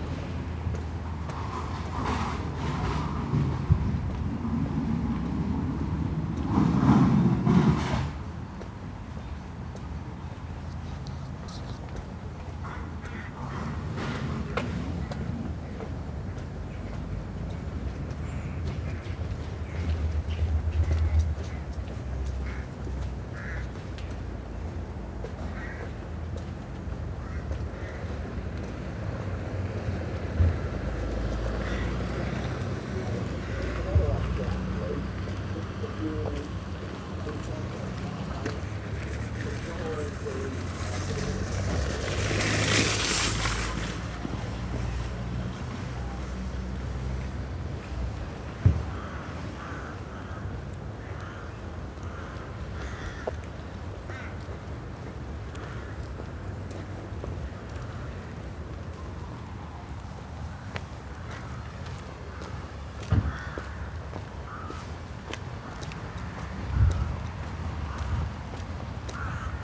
Behind the Waitrose carpark, just off London Road, Headington, Oxford, UK - Near London Road, listeing
I am exploring the sounds of my commute. After I park at Barton, I walk down the very busy and noisy London road. This moment when I turn off that main road and start heading for the quiet backstreets is the first part of the journey where you can find details; you can hear individual footsteps, the sounds of birds, the sounds of someone working at the back of the supermarket. Yes, you can also hear the deep bass rumble of London Road, but it's interesting to find so much detail and variety even so close to that very noisy road. The wind was extremely strong on this day, sorry for the bass of the recordings, those Naiant X-X omnis don't do so well on a really breezy day...